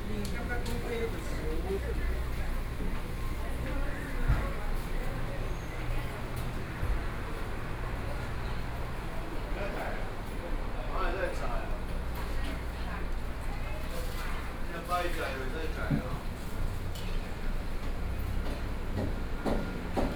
Yilan City, Taiwan - Traditional Market
Walking in the building's traditional markets, From the ground floor to the first floor, Binaural recordings, Zoom H4n+ Soundman OKM II